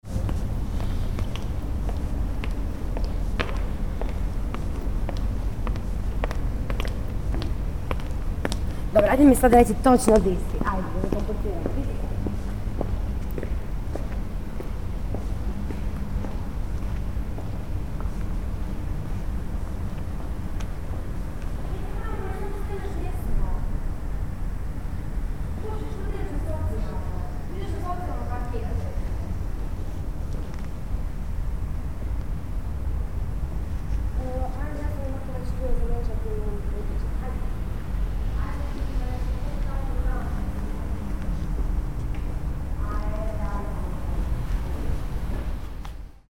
Pedestrian passage across/below Radio Rijeka building.